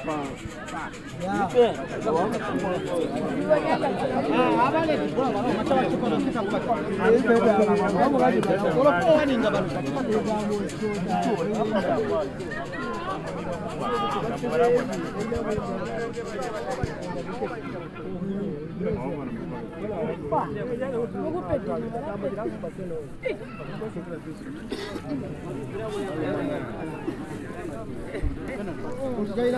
Football pitch, Sinazongwe, Zambia - At the Saturday match....
a match at the local football pitch is a great attraction in the rural community.... especially on Saturday afternoons, this is where you go...
Southern Province, Zambia